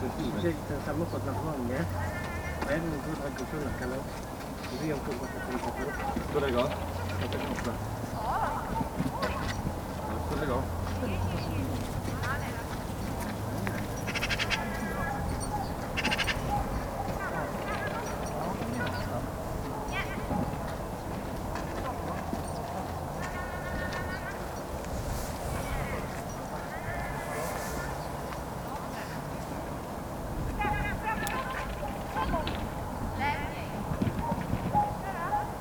Poznan, Nad Rozanym Potokiem - dog training
a girl training her dog on an obstacle course. the area is located among garages so her enthusiastic shouts get reverberated of nearby walls. (roland r-07)